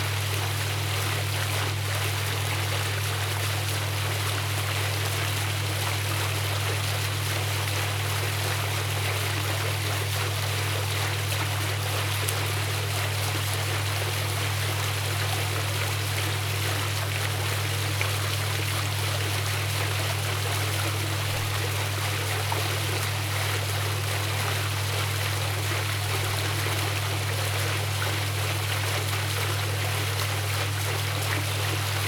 Berlin, Gardens of the Wrorld - fountain with a drone
a fountain that besides splashes of water makes a drone. probably coming from the pump.
Berlin, Germany, 30 August 2013, ~14:00